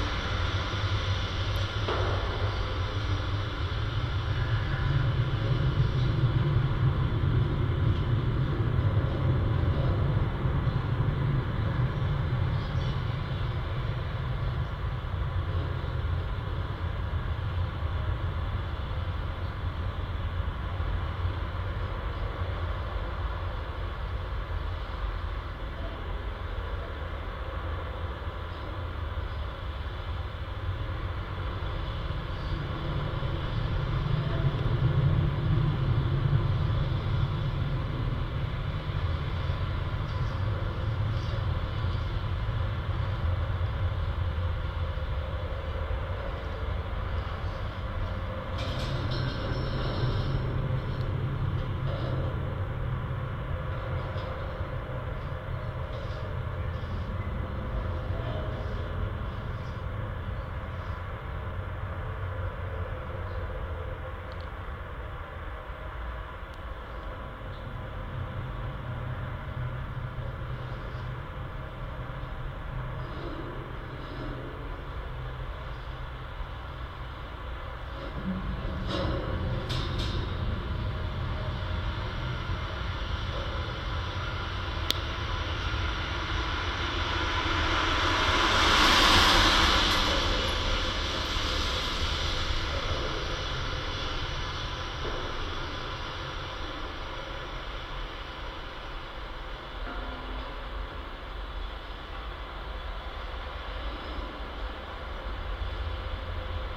Margirio g., Ringaudai, Lithuania - Construction site wire fence
A quadruple contact microphone recording of a construction site fence. Wind and traffic ambience reverberating and resonating.